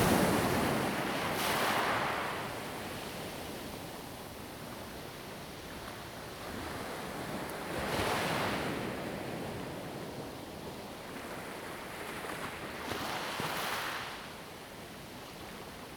In the dock, Waves and tides
Zoom H2n MS +XY